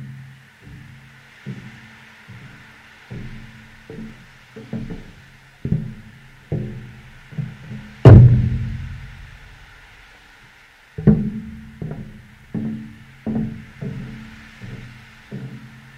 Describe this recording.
Steps over the railway bridge (contact microphone)